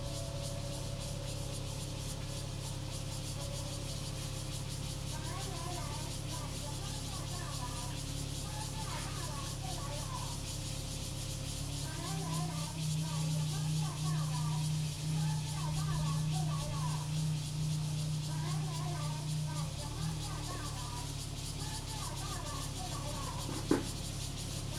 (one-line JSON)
{"title": "Taifeng Rd., Taimali Township - Small village", "date": "2014-09-05 10:47:00", "description": "Small village, Cicadas and traffic sound, The weather is very hot\nZoom H2n MS +XY", "latitude": "22.61", "longitude": "121.00", "altitude": "30", "timezone": "Asia/Taipei"}